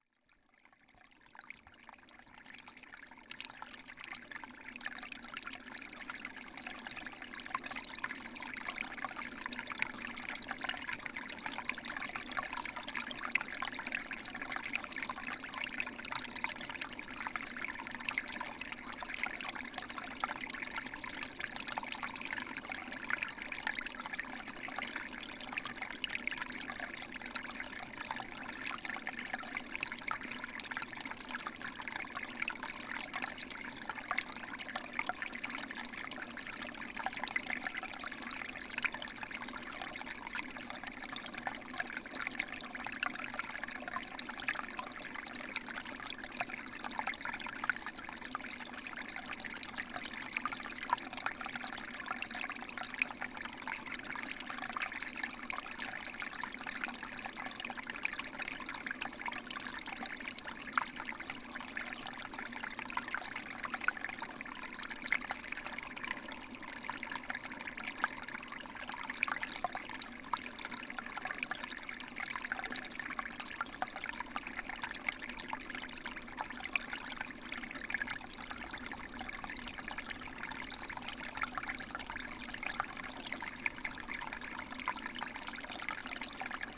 Source-Seine, France - Seine stream
The Seine river is 777,6 km long. This is here the sound of the countless streamlets which nourish the river. Here water is flowing from sedge in a thicket.
29 July